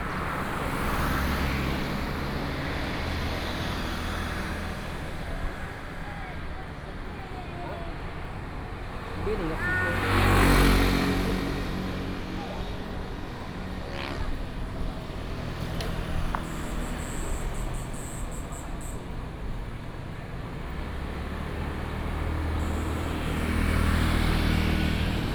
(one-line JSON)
{"title": "Sec., Jiaoxi Rd., Jiaoxi Township - walking on the Road", "date": "2014-07-07 09:37:00", "description": "Traditional Market, Very hot weather, Traffic Sound", "latitude": "24.82", "longitude": "121.77", "altitude": "13", "timezone": "Asia/Taipei"}